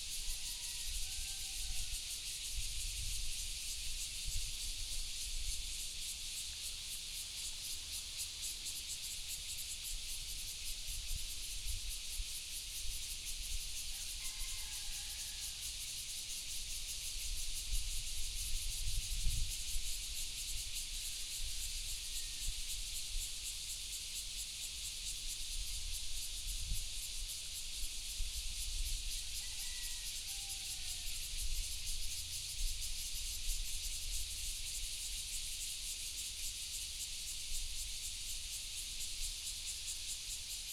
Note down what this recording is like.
Cicadas sound, Crowing sound, In the cemetery